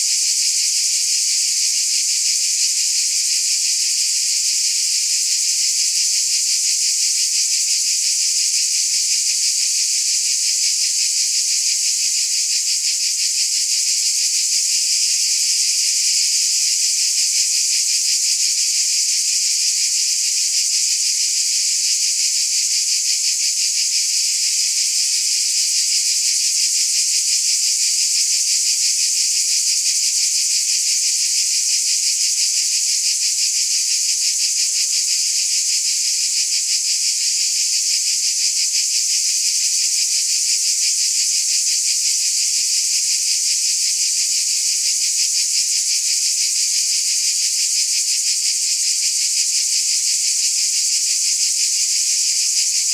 Sound recording of cicada singing during the summer in South of France (Minervois).
Recorded by a setup ORTF with 2 Schoeps CCM4
On a Sound Devices Mixpre 6 recorder
Occitanie, France métropolitaine, France